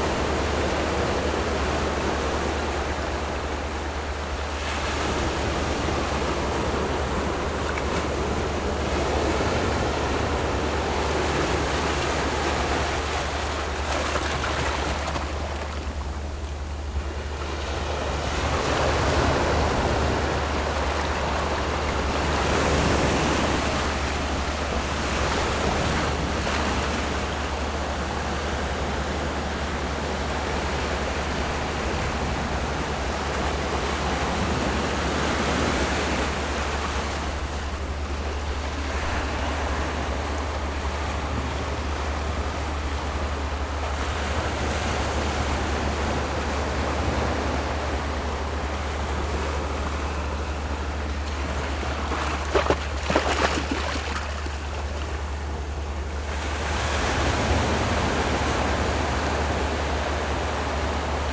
五結鄉季新村, Yilan County - Sound of the waves
Hot weather, In the beach, Sound of the waves, There are boats on the distant sea
Zoom H6 MS+ Rode NT4